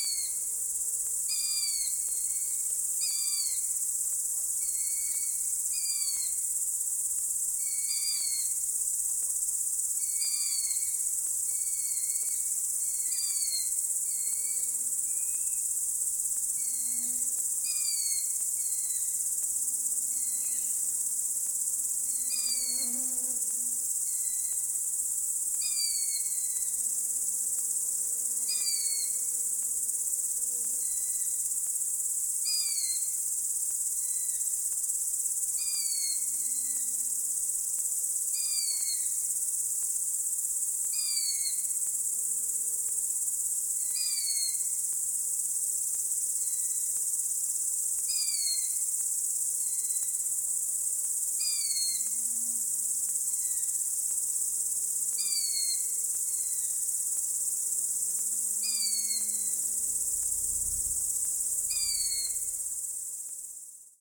Seliste crickets and young owls
baby owls squeaking in the night